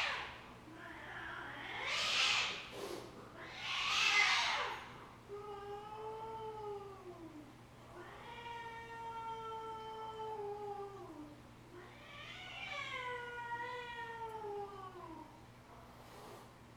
Ln., Sec., Zhongyang N. Rd., Beitou Dist - Late at night
Late at night, Mew, Household washing machine next door sound, Traffic Sound, Zoom H6 M/S
Taipei City, Taiwan, 28 January 2014, ~02:00